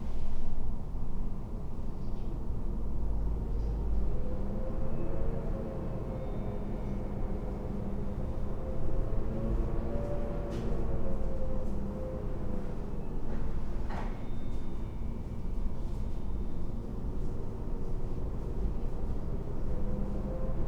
doors, Karl Liebknecht Straße, Berlin, Germany - wind through front door crevice, inside and outside merge
softened sounds of the city, apartment building and a room
Sonopoetic paths Berlin
Berlin, Deutschland, European Union